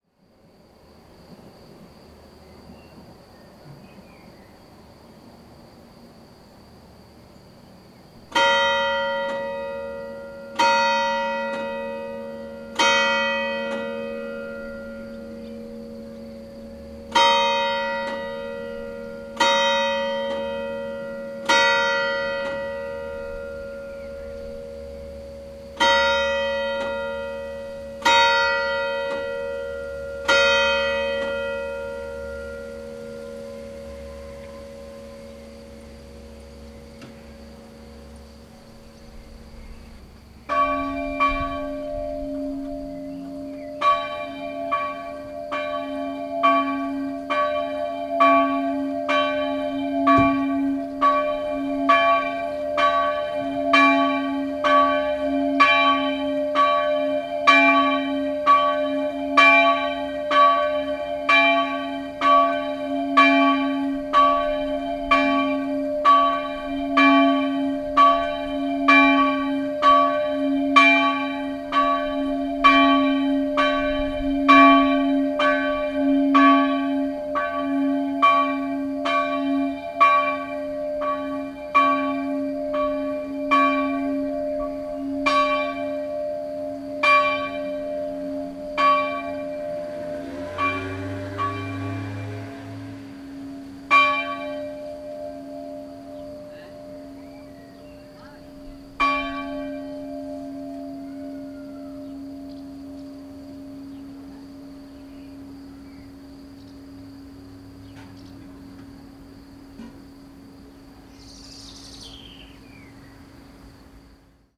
Cuines, the bells, 7am.
Minidisc recording from 1999.